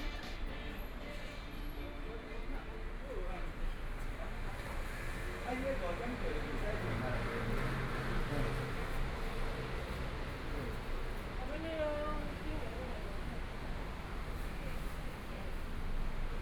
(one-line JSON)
{"title": "Songjiang Rd., Zhongshan Dist. - walking on the Road", "date": "2014-01-20 16:08:00", "description": "Walking in the small streets, Traffic Sound, Various shops voices, Binaural recordings, Zoom H4n+ Soundman OKM II", "latitude": "25.06", "longitude": "121.53", "timezone": "Asia/Taipei"}